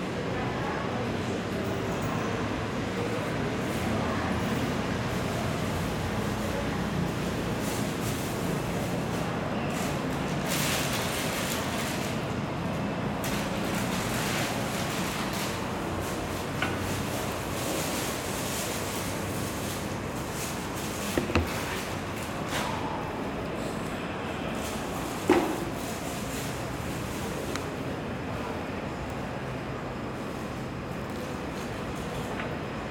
Calle 67 No. 53 - 108 Bloque 9, oficina 243, Medellín, Aranjuez, Medellín, Antioquia, Colombia - Tarde Ocupada
Una tarde que empieza tranquila en el boque 9 de la Universidad de Antioquia, pero que lentamente mientras las clases inician se puede escuchar un poco mas de la vida universitaria desarrollarse